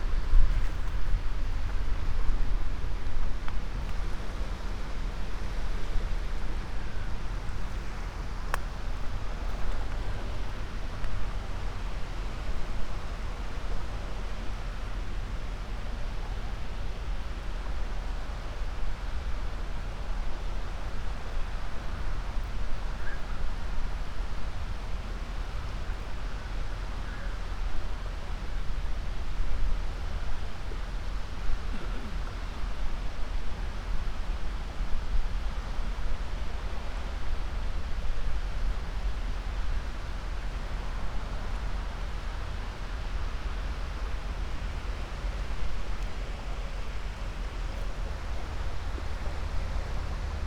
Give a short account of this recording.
walk around boundary stone at the location between Italy and Slovenija, winds through poplar grove and sea waves softly flow together ... borders ”that are not” ...